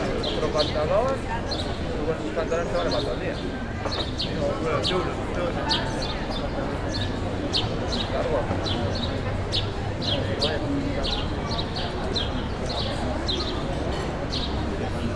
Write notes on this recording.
Easo Plaza, Captation : ZOOM H6